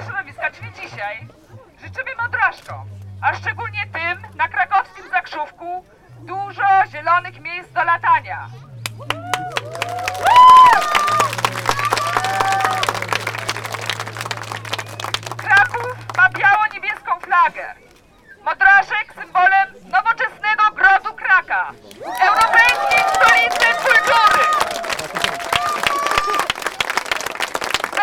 Kraków, Zakrzówek
Modraszkowy Zlot na Zakrzówku / environmental protest against developing one of the most beautiful green areas in Kraków into a gated community for 6000 inhabitants.
Kraków, Poland, 5 June 2011